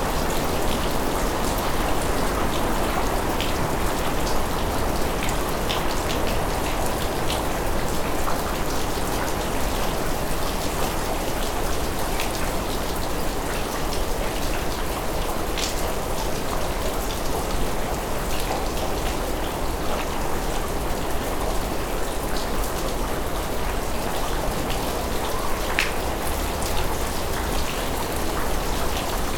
Court-St.-Étienne, Belgique - A rainy day
A morning rain, near a farm called "ferme de Sart". Early in this morning, all is quiet.